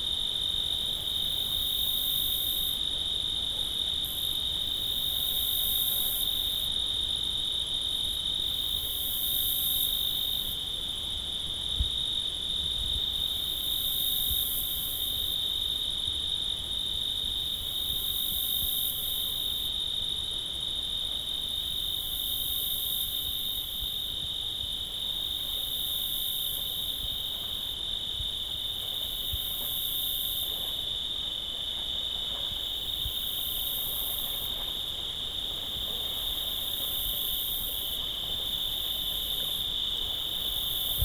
Riserva Naturale dello Zingaro, San Vito Lo Capo TP, Italia [hatoriyumi] - Paesaggio estivo notturno
Paesaggio estivo notturno con cicale, grilli e insetti